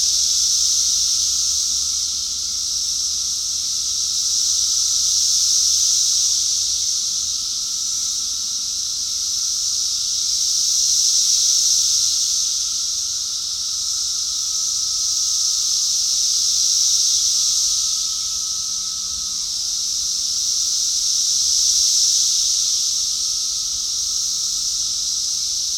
Sedgwick Ave, Gettysburg, PA, USA - Cicadas Brood X 2021
Brood X Cicadas 05 May 2021, recorded near Little Round Top in the Gettysburg National Military Park.
The insects were active and loud. There was some distant traffic which was mostly drowned out by the cicadas.
Sound Devices MixPre-3 v2.
AT 3032 omni mics spaces about 2 meters with Roycote baseball wind covers and fur over that.
Pennsylvania, United States, May 2021